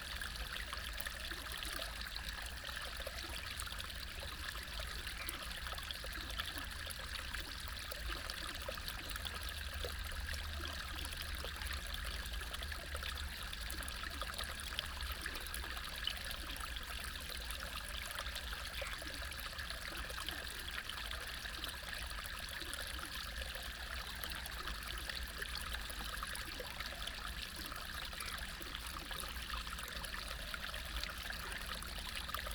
Frogs chirping, Flow sound, Insects called